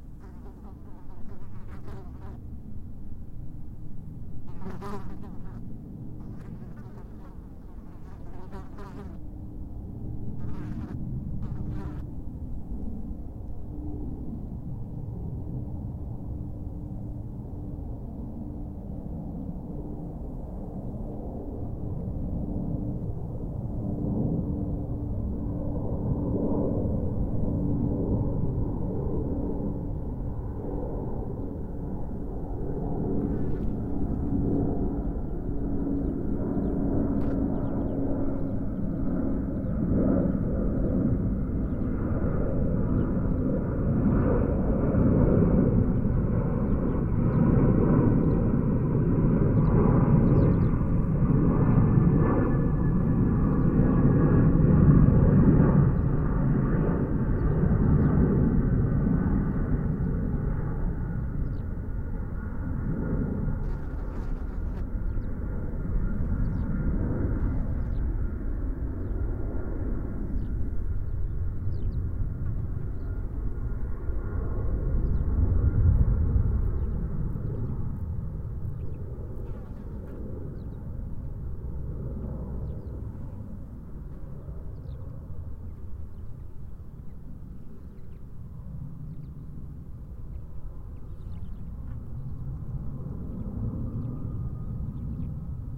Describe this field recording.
Soundscape of the Crau, an arid stony desert area. Unfortunately also close to Marseille airport... otherwise it would just be insects and birds. Binaural recording. Artificial head microphone set up on a stone heap. Microphone facing north east. Recorded with a Sound Devices 702 field recorder and a modified Crown - SASS setup incorporating two Sennheiser mkh 20 microphones.